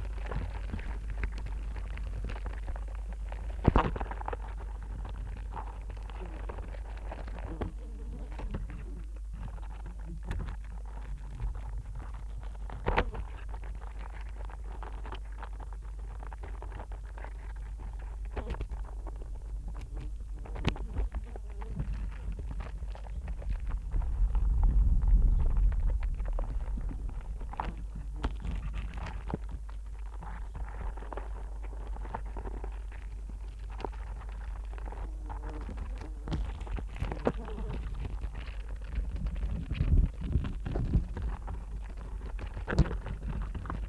Forest Garden, UK - fallen apricot
fallen fruit attracting wasps and flies